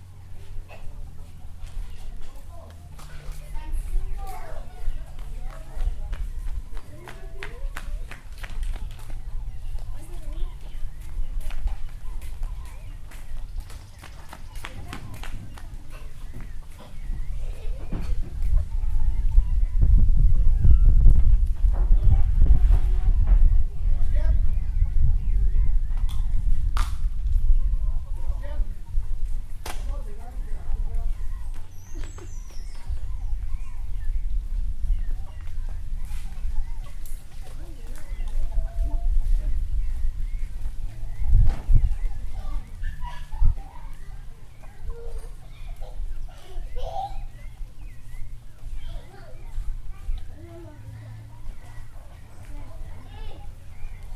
Puerto Gaitán, Meta, Colombia - Hogar Los Amigos ICBF-Resguardo Sikuani de Wacoyo
Audio grabado en el hogar Los Amigos de Instituto Colombiano de Bienestar Familiar el miércoles 30 de julio de 2014 en el marco del Proyecto Piloto de Investigación, Sonoridad Sikuani, del Plan Departamental de Música del Meta.